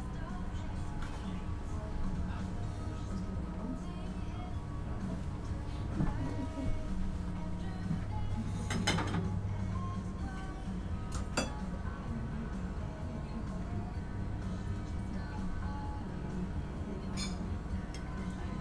Hafenbaude, Berlin Köpenick - pub ambience
Hafenbaude, a little pub at the ferry boat pier, Sunday afternoon ambience
(Sony PCM D50, Primo EM172)
Berlin, Germany, 16 October 2016, 14:20